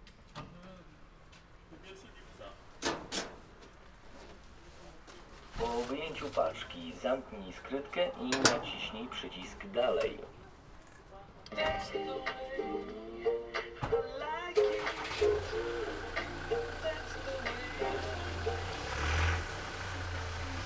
Poznan, Piatkowo district, in fornt of Tesco store - parcel pick up machine
picking up a book from an parcel machine.